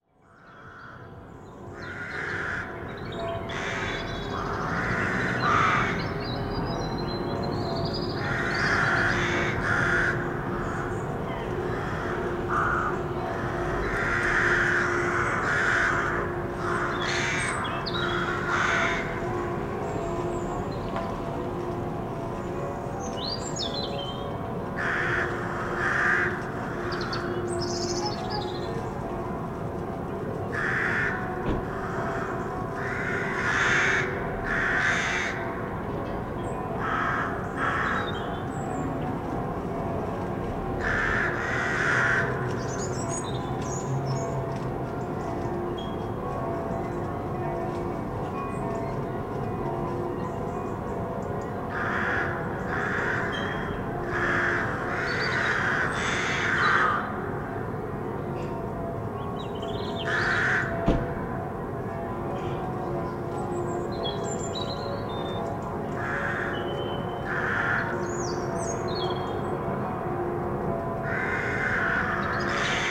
Rue Etienne Mimard, Saint-Étienne, France - Crows for Easter
Ringing bells and crows concert during Easter period.
From my window confinement time.
AT4021 in ORTF, Sound device Mixpre6 no processing.